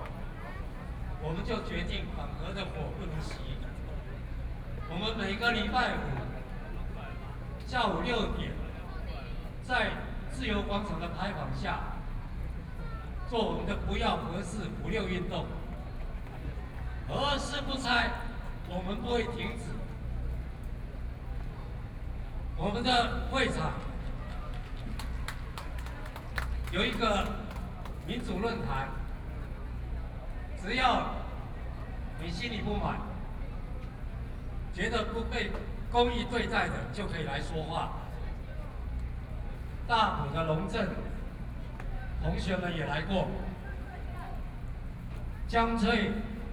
Ketagalan Boulevard, Taipei City - Protest
Creators of art and culture in Taiwan, Participation in protests, Sony PCM D50 + Soundman OKM II
Taipei City, Taiwan, 18 August 2013